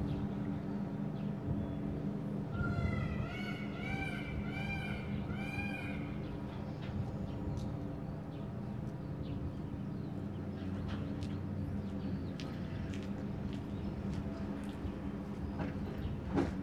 recorded at a bus stop near garbage truck company. they keep a bunch of peafowls on their premises. bird's call can be heard a few times. a worker mows the grass around the place on a big mower. various objects get under the blades, sounds of them being mangled are to be heard.